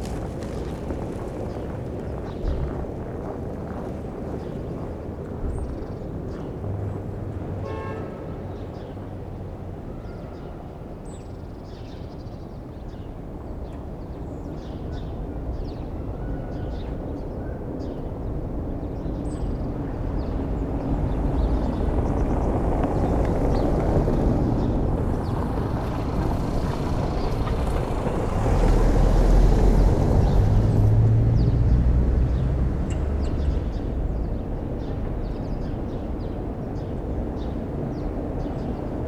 Berlin: Vermessungspunkt Friedel- / Pflügerstraße - Klangvermessung Kreuzkölln ::: 03.02.2012 ::: 10:50
Berlin, Germany, 3 February, 10:50